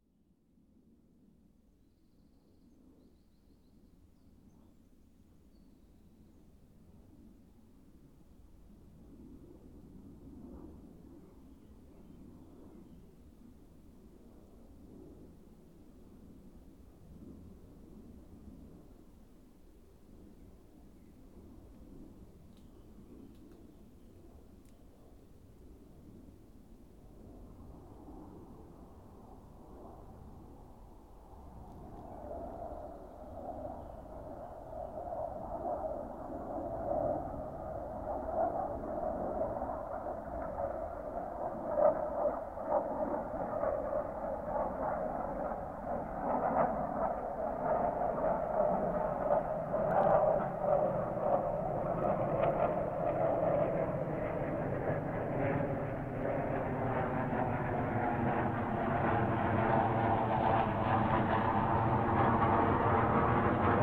Borne Sulinowo, Polska - military jet - binaural rec
Military jet doing two practice laps over the city. Binaural records.